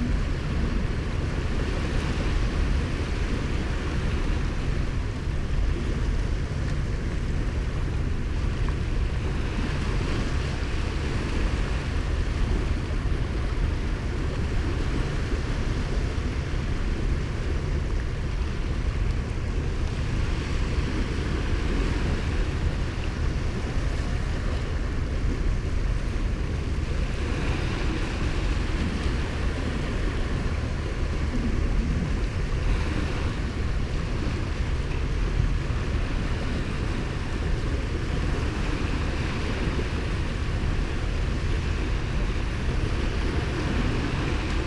late aftrenoon sea, Novigrad - while reading, silently
Novigrad, Croatia, 16 July 2014